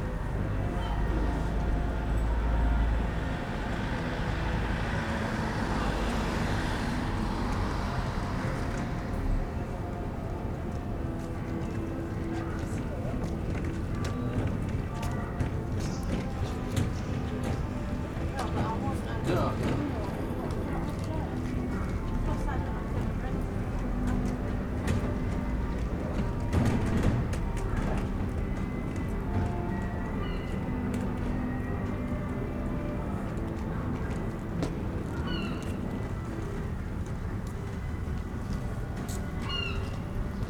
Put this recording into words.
Bridlington soundscape ... traffic ... arcade ... voices ... two road sweepers push their carts by ... bird calls ... herring gull ... pied wagtail ... open lavalier mics clipped to hat ...